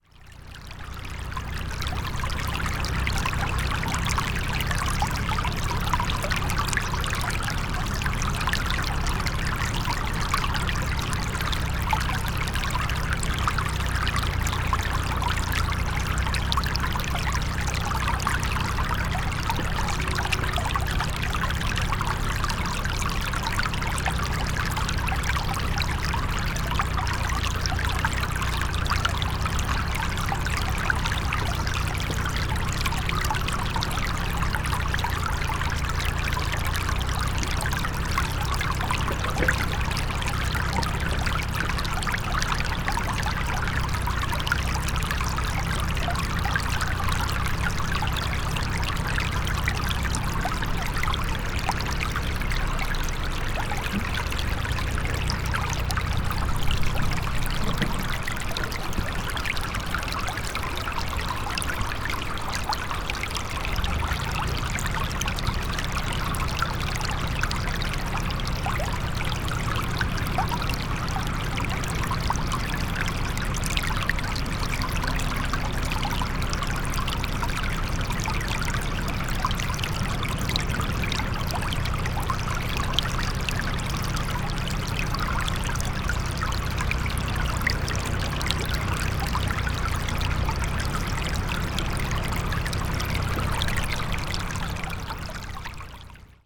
still poem, Drava, Slovenia - flux
Drava river and her playful waves on dunes of rounded stones
Starše, Slovenia, 5 October 2012, ~18:00